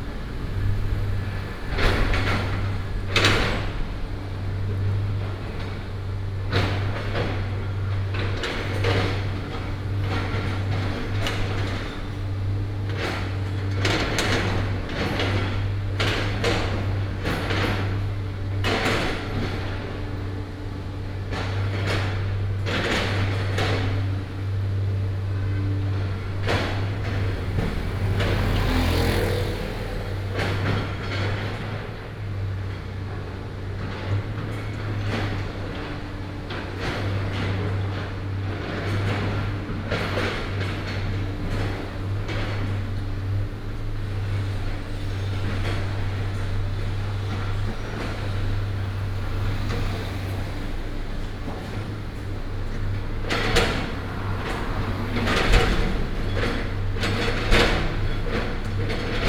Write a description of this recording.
Dismantle the building, traffic sound, Binaural recordings, Sony PCM D100+ Soundman OKM II